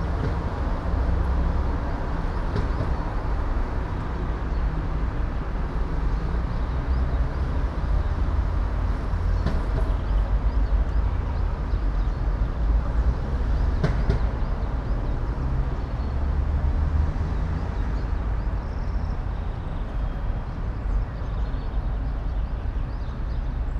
all the mornings of the ... - apr 10 2013 wed